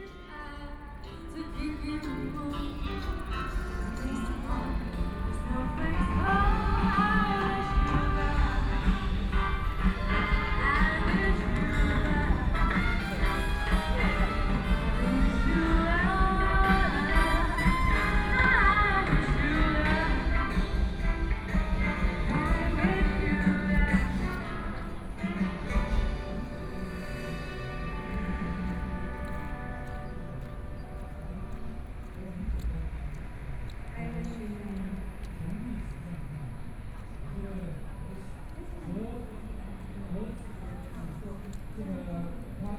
Chiang Kai-Shek Memorial Hall - soundwalk
From the square go out to the roadside, Sony PCM D50 + Soundman OKM II
Taipei City, Taiwan, August 2013